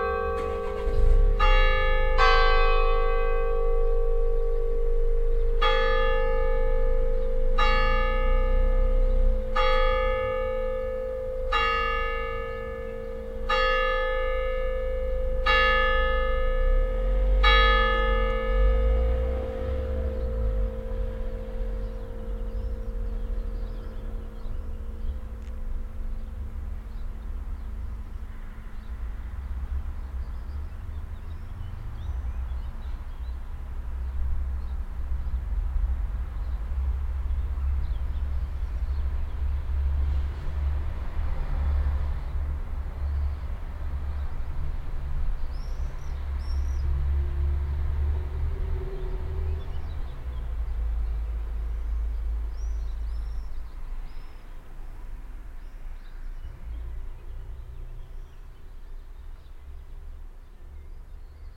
clervaux, church, bell
The bell play of Clervaux's church St. Barholomäus. Here recorded at 7 clock p.m.
At the end the slamming of some car doors nearby.
Clervaux, Kirche, Glocke
Das Glockenspiel der Clerfer Dekanatskirche. Aufgenommen um 7 Uhr abends. Am Ende das Schlagen von Autotüren.
Clervaux, église, cloches
Le carillon de l’église Saint-Barthélemy de Clervaux. Enregistré à 19h00. À la fin, une portière de voiture qui claque.
Projekt - Klangraum Our - topographic field recordings, sound objects and social ambiences
10 July, 18:49